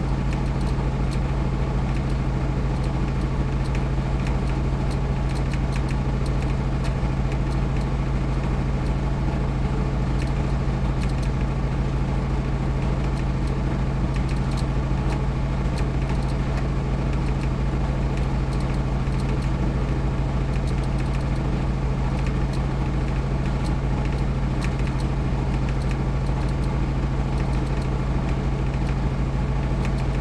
berlin: liberdastraße - the city, the country & me: generator
the city, the country & me: august 20, 2010